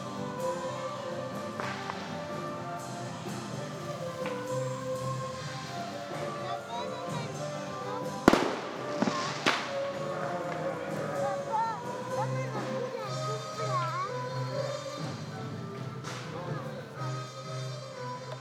Manlleu, Barcelona, España - Revetlla de Sant joan